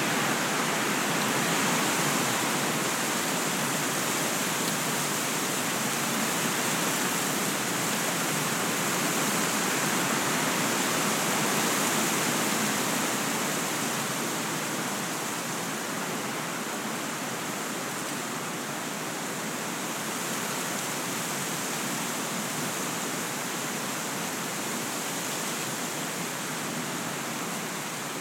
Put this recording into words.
Sound of a windy afternoon in a field of weeds and trees.